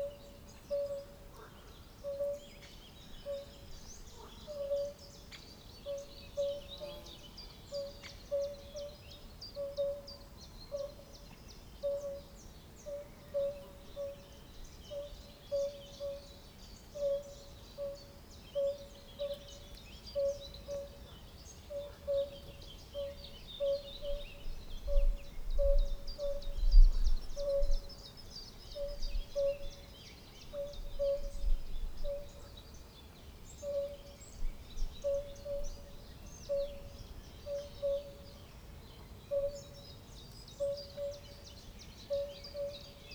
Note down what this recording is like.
A beautiful place in floodplain forest in region Židlochovicko. This wetland is habitat of rana arvalis, bombina bombina during the spring time.